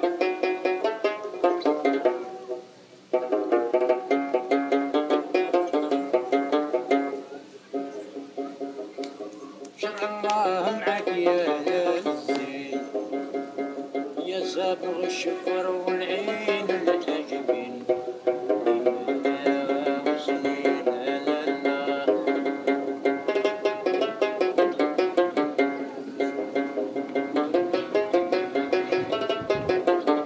A man play traditional algerian music with a banjo in front of the sea.
Recorded with a Neumann Km184 at Estaque Plage, Marseille.
Marseille, France